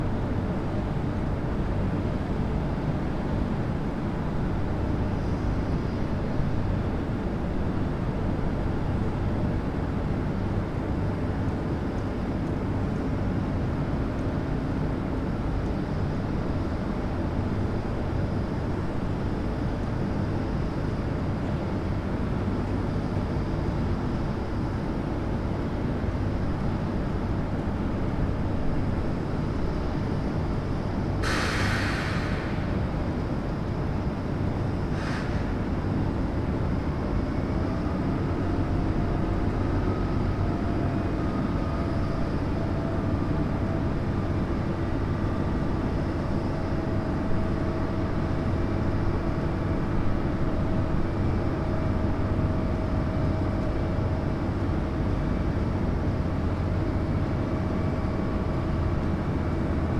Dovozna cesta, Maribor, Slovenia - corners for one minute
one minute for this corner: Dovozna cesta
20 August 2012, 19:05